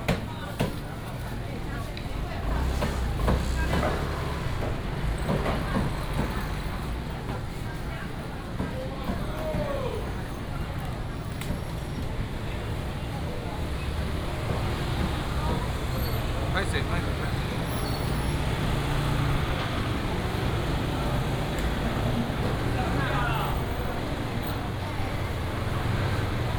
東興市場, North Dist., Taichung City - Walking through the market
Walking through the market, From the outdoor market into the indoor market, Traffic sound, Many motorcycles
Taichung City, Taiwan, 22 March 2017, 10:37